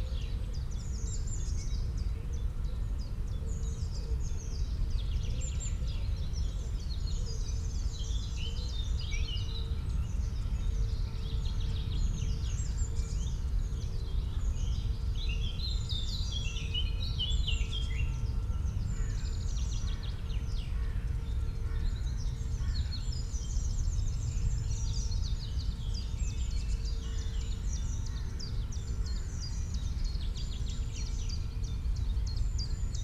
Urnenhain, Parkfriedhof Neukölln, Berlin, Deutschland - cemetery, spring ambience
morning ambience in spring at cemetery Parkfriedhof
(Sony PCM D50, DPA4060)